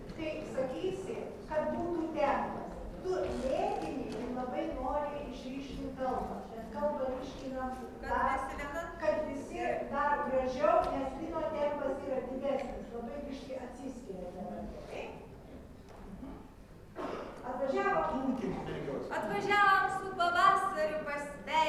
reheasal for some folklore festival
28 February 2011